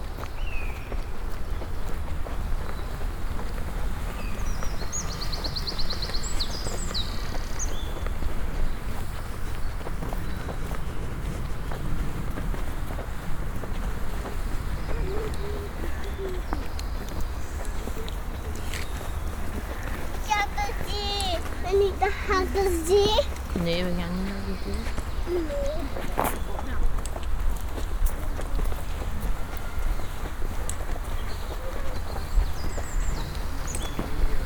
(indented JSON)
{
  "title": "Promenade dans le Bois de Hal - A Walk in the Hallerbos - Promenade dans le Bois de Hal - A Walk in thé Hallerbos",
  "date": "2011-04-17 11:07:00",
  "description": "Promenade dans le Bois de Hal - A Walk in the Hallerbos.",
  "latitude": "50.71",
  "longitude": "4.27",
  "altitude": "116",
  "timezone": "Europe/Brussels"
}